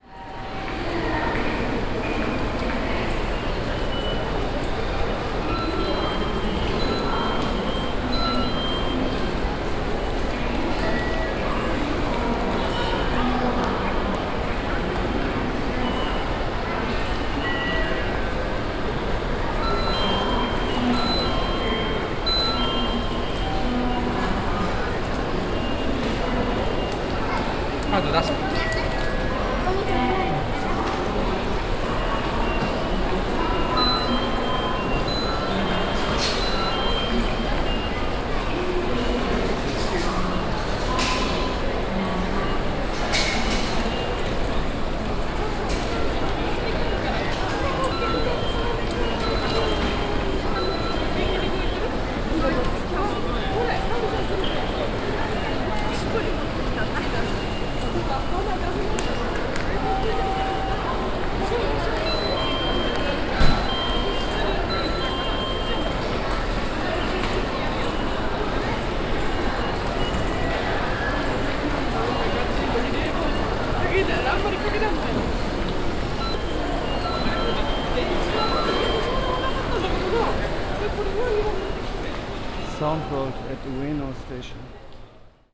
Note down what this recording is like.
inside the station hall at the ticket machines, international city scapes - social ambiences and topographic field recordings